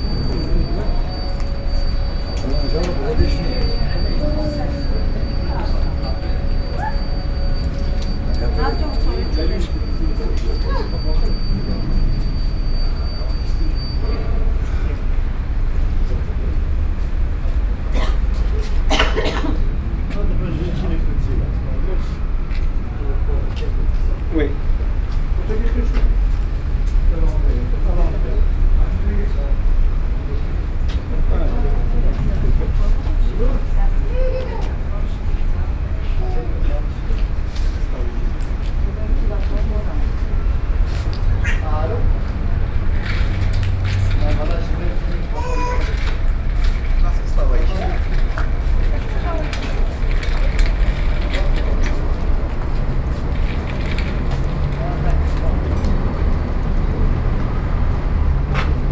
Brussels, Hospital Paul Brien - baby crying.
Schaerbeek, Belgium